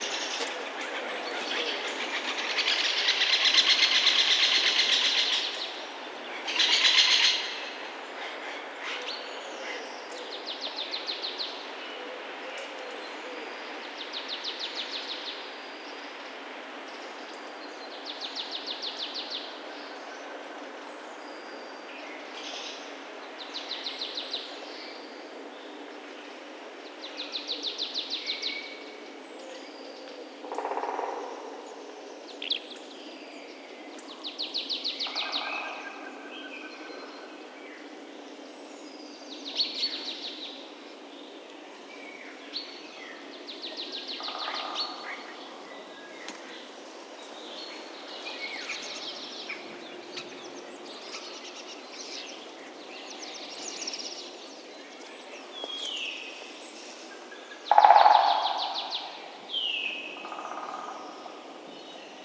Zerkow - Czeszewo Landscape Park, 2020.03.08, 7 a.m.; Zoom H6 and Rode NTG5